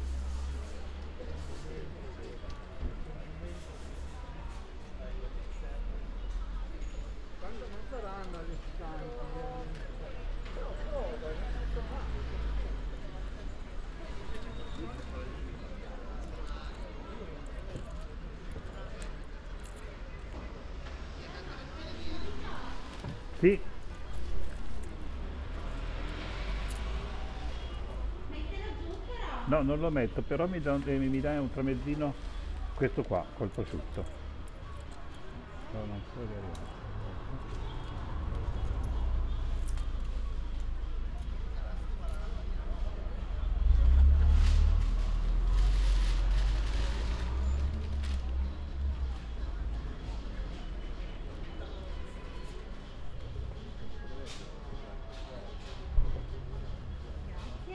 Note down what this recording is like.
“Outdoor market on Monday in the square at the time of covid19”: Soundwalk, Chapter CXLV of Ascolto il tuo cuore, città. I listen to your heart, city. Monday, November 30th 2020. Walking in the outdoor market at Piazza Madama Cristina, district of San Salvario, more then two weeks of new restrictive disposition due to the epidemic of COVID19. Start at 00:11 p.m. end at 00:32 p.m. duration of recording 30:49”, The entire path is associated with a synchronized GPS track recorded in the (kml, gpx, kmz) files downloadable here: